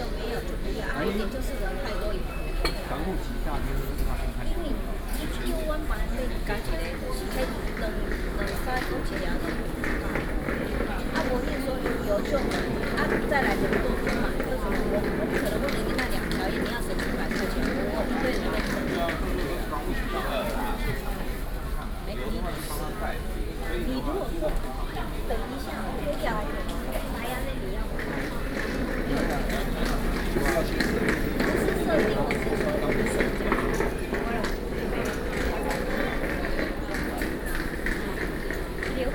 Taipei city, Taiwan - Railway platform
Taipei Main Station, Railway platform, Binaural recordings
Běipíng West Rd, 3號台北車站旅遊服務中心, 24 June 2012